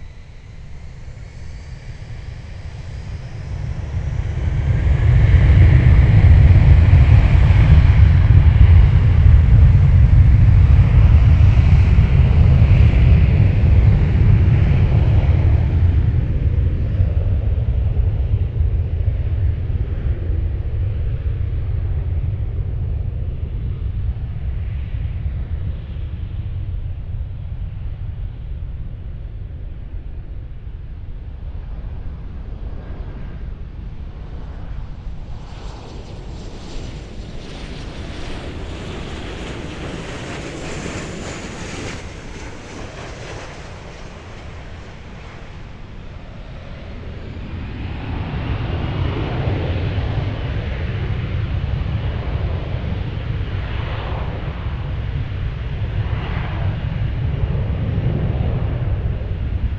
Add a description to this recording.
The sounds of the dog park next to Minneapolis/Paul International Airport. This is a great spot to watch planes when aircraft are landing on runway 12R. In this recording aircraft can be heard landing and taking off on Runway 12R and 12L and taking off on Runway 17. Some people and dogs can also be heard going by on the path.